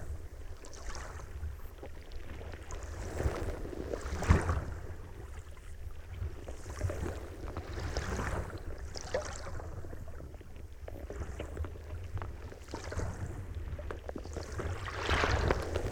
lake Kertuojai, Lithuania, hydrophone in the sand
hydrophone burried in the sand, under water. and to get more " low atmosphere" I sticked LOM geophone on the shore of the lake...